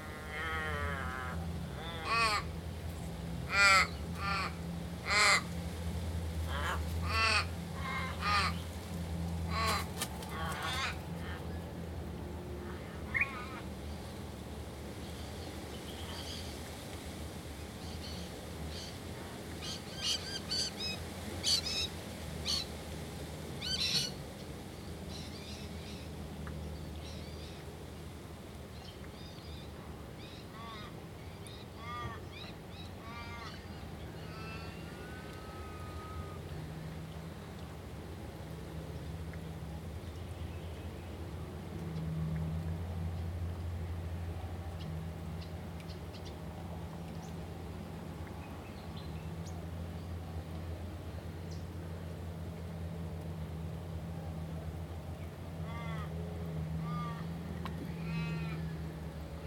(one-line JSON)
{"title": "Rocky Cove, near Wagyl Cave, North Fremantle - Riverside outside Wagyl Cave, Rocky Cove, North Fremantle.", "date": "2017-10-23 13:30:00", "description": "I was sitting on the river bank, near a culturally significant cave in North Fremantle. The cove is called Rocky Cove, and the cave is Wagyl Cave (Rainbow serpent). A cormorant surfaced next to me, then took off and flew away, and three Australian Ravens flew up and perched above me, talking to themselves.", "latitude": "-32.03", "longitude": "115.76", "altitude": "5", "timezone": "Australia/Perth"}